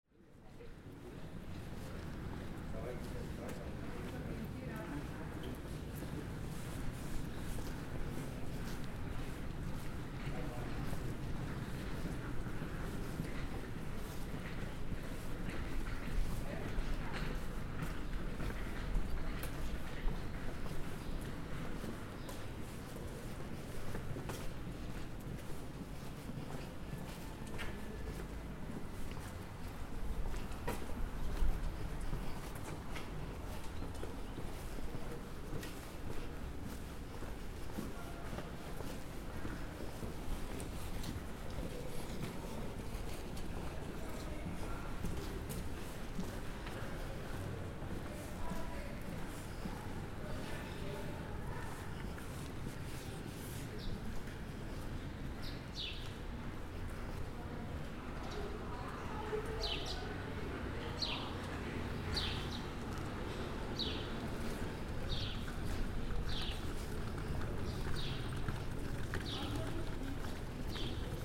Poschiavo, gehen durch den Ort
gehen durch den Ort von Poschiavo, die steinigen Häuser hallen, der italienische Flair des Graubündens tritt durch